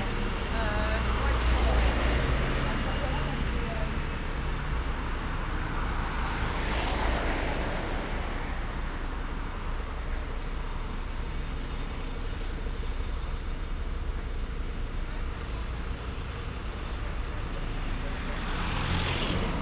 "Friday night walk in Paris, before curfew, in the time of COVID19": Soundwalk
Friday, October 16th 2020: Paris is scarlett zone for COVID-19 pandemic.
One way trip walking from Cité de la Musique Concert Hall (Gerard Grisey concert), to airbnb flat. This evening will start COVID-19 curfew from midnight.
Start at 10:41 p.m. end at 11:42 p.m. duration 01:01:17
As binaural recording is suggested headphones listening.
Path is associated with synchronized GPS track recorded in the (kmz, kml, gpx) files downloadable here:
For same set of recording go to:
France métropolitaine, France, October 2020